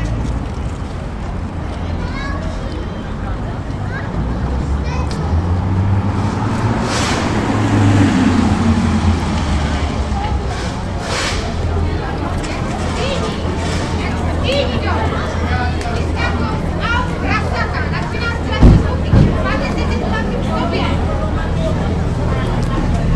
Pernerova ulice, cleaning
city cleaners and sudden quarell of roma citisens.
7 April 2011, 18:15